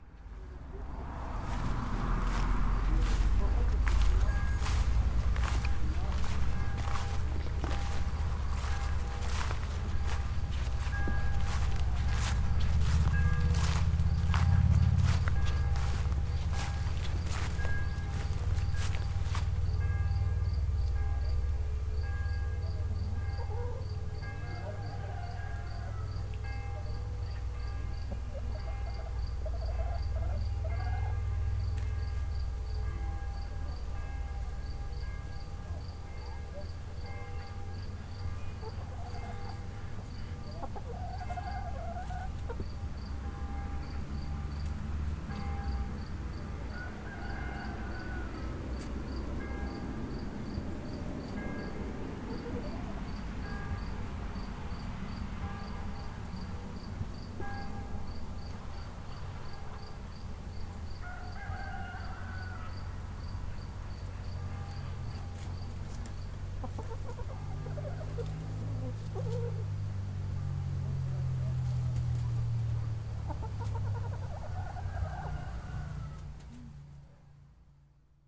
La Vy des Pierres, Leschaux, France - près du poulailler
Col de Leschaux, l'angelus sonne près d'un poulailler .
France métropolitaine, France, 17 July 2021